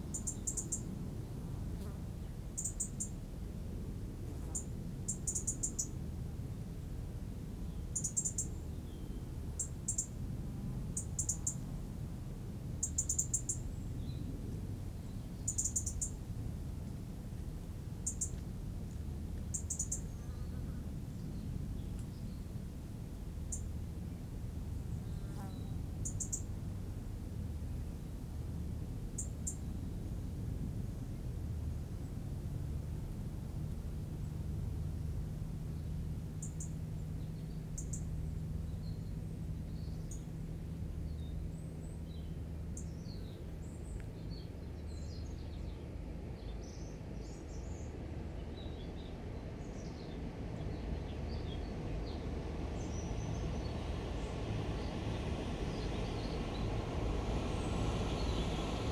{"title": "Porte-Joie, France - Eurasian wren", "date": "2016-09-22 16:30:00", "description": "An eurasian wren is singing and a boat is passing by on the Seine river.", "latitude": "49.25", "longitude": "1.26", "altitude": "13", "timezone": "Europe/Paris"}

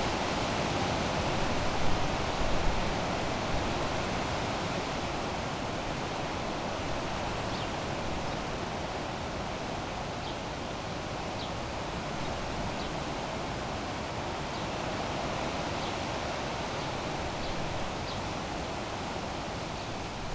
{"title": "Tempelhofer Feld, Berlin, Deutschland - warm and windy spring day", "date": "2016-05-22 12:45:00", "description": "a warm and windy day at the poplars. this recording can only give a glimpse on how the trees sounded. hitting the limits of the internal mics of the recorder.\n(Sony PCM D50)", "latitude": "52.48", "longitude": "13.40", "altitude": "42", "timezone": "Europe/Berlin"}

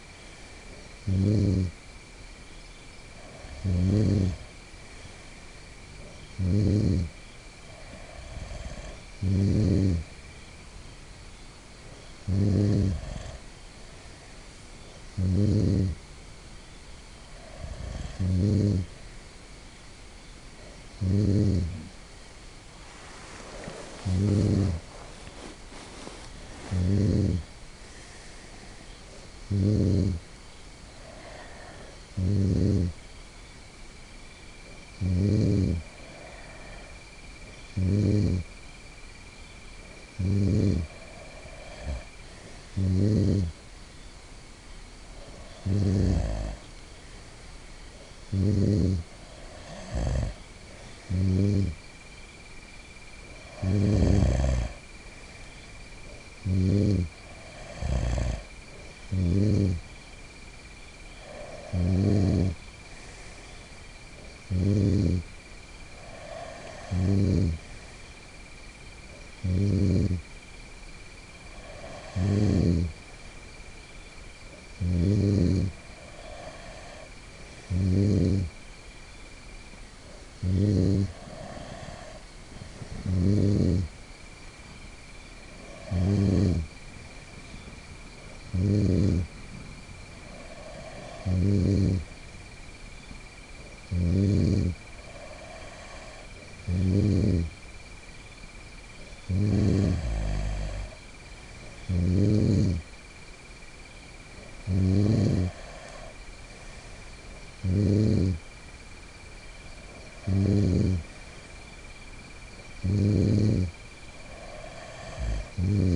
{"title": "Pedernales Falls State Park, TX, USA - Friend Snoring in Tent after Vino", "date": "2015-10-04 01:16:00", "description": "Recorded with a Marantz PMD661 and a pair of DPA 4060s.", "latitude": "30.30", "longitude": "-98.24", "altitude": "288", "timezone": "America/Chicago"}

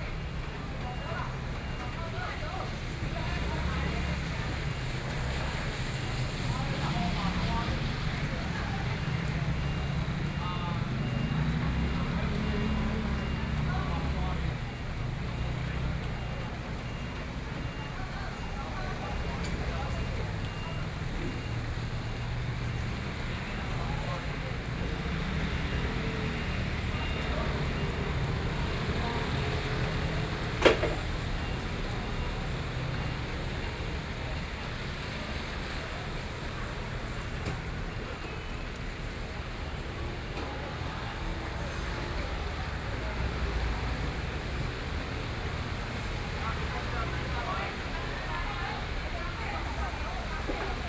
{"title": "Nanmen Rd., Luodong Township - traditional market", "date": "2013-11-07 09:10:00", "description": "Rainy Day, in the traditional market, Zoom H4n+ Soundman OKM II", "latitude": "24.67", "longitude": "121.77", "altitude": "14", "timezone": "Asia/Taipei"}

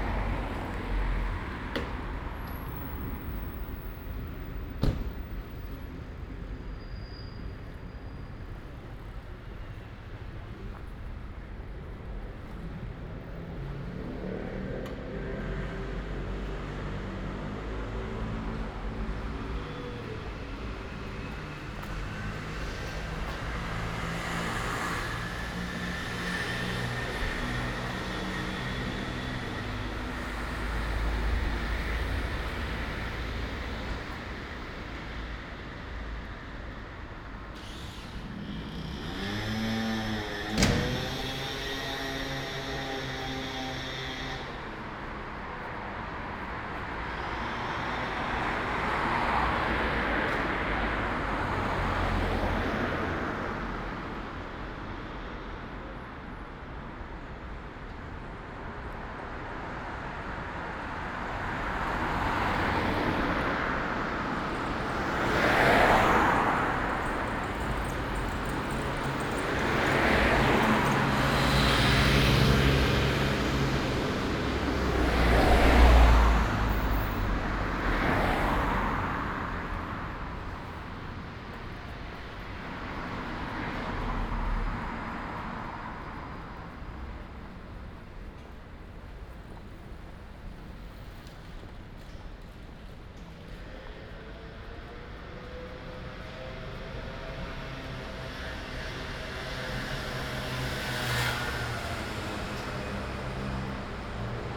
{"title": "Paris soundwalks in the time of COVID-19 - Saturday night walk in Paris, before curfew, in the time of COVID19: Soundwalk", "date": "2020-10-17 20:43:00", "description": "\"Saturday night walk in Paris, before curfew, in the time of COVID19\": Soundwalk\nSaturday, October 17th 2020: Paris is scarlett zone for COVID-19 pandemic.\nOne way trip walking from from Boulevard Poissonnière to airbnb flat. This evening will start COVID-19 curfew from 9 p.m.\nStart at 8:43 p.m. end at 9:16 p.m. duration 33’05”\nAs binaural recording is suggested headphones listening.\nPath is associated with synchronized GPS track recorded in the (kmz, kml, gpx) files downloadable here:\nFor same set of recordings go to:", "latitude": "48.88", "longitude": "2.35", "altitude": "48", "timezone": "Europe/Paris"}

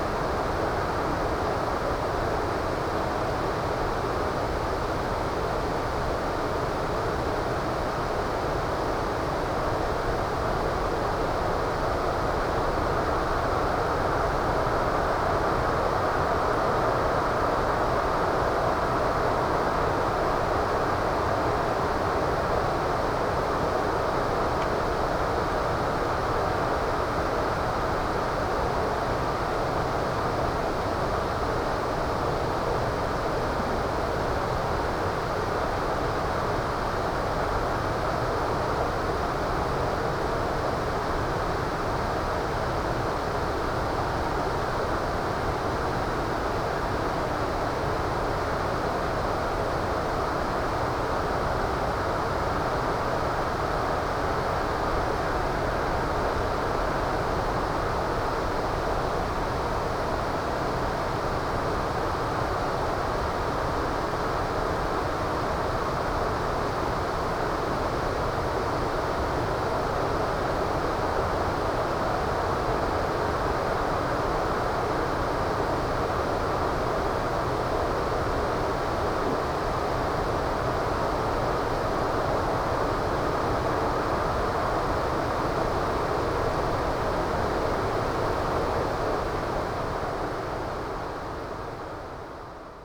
{"title": "Austad, Bygland, Norway - WLD setesdal valley by night", "date": "2012-07-18 23:50:00", "description": "recorded close to midnight from a terrace overlooking the setesdal valley - heard are wind, a waterfall (about 1km away, but fully visible) and very occasionally a passing bird.", "latitude": "58.95", "longitude": "7.69", "altitude": "224", "timezone": "Europe/Oslo"}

